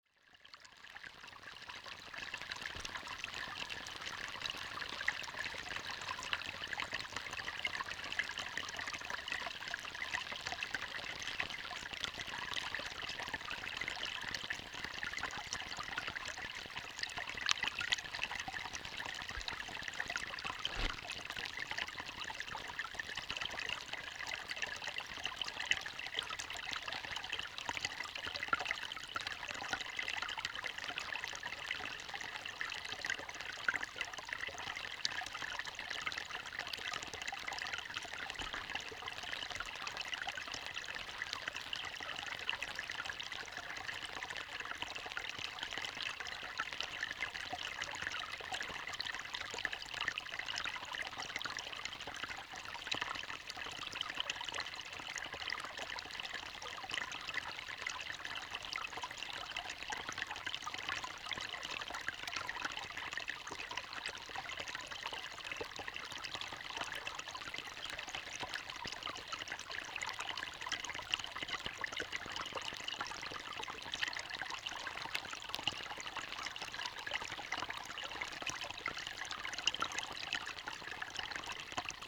Lithuania, Utena, on the first ice
contact microphone on the first ice in the rivers turn
17 January, ~15:00